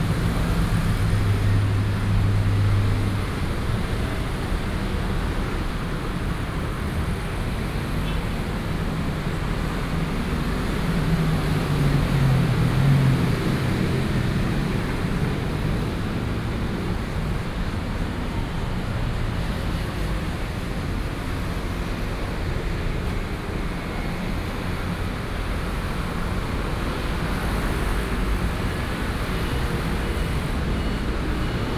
Sec., Heping E. Rd., Da’an Dist., Taipei City - Traffic Sound
Traffic Sound, Heavy traffic